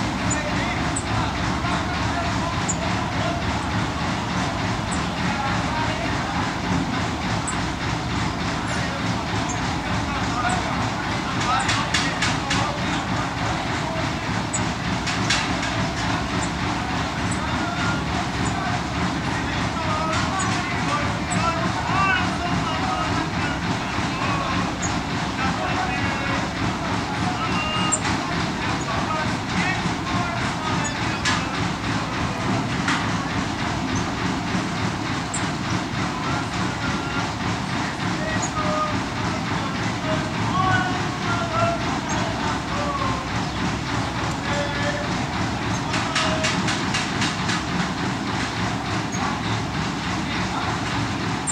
Fazenda Chiqueirão, noisy in potatoe production line machine. Poços de Caldas - MG, Brasil - noisy in potatoe production line machine with bad singer
Trabalhadores selecionando batatas para sementes em uma máquina seletora na Fazenda Chiqueirão. Poços de Caldas, MG, Brasil. Imiscuido ao ruído da máquina está um trabalhador cantando canções de rádio e executando muito mal a canção, de forma irritante pela má qualidade de sua cantoria. O som da máquina é ritmado e altamente barulhenta.